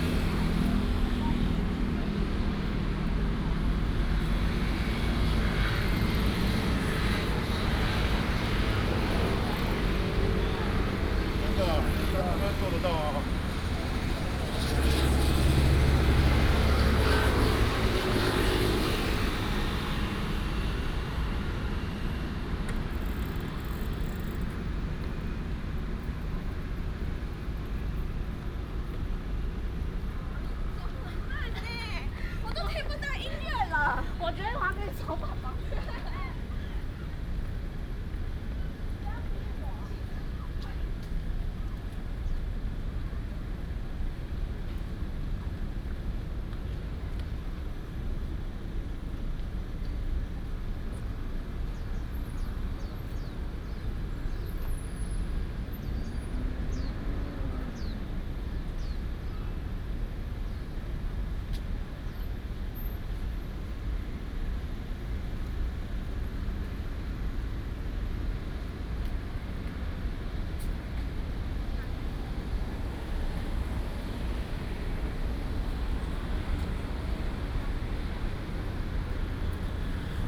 Gongyuan Rd., Zhongzheng Dist., Taipei City - Traffic Sound
In the park entrance, Traffic Sound